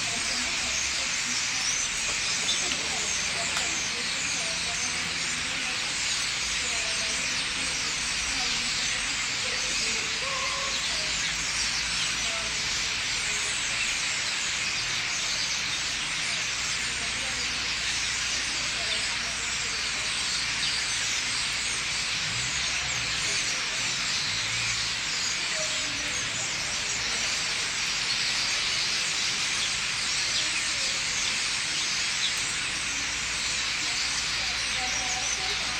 Rue des Prisons, Limoges, France - Starlings - étourneaux
Thousands of starlings gathering in the trees at night.
Un bon millier d'étourneaux se rassemblent dans les arbres.
Tech Note : Sony PCM-M10 internal microphones.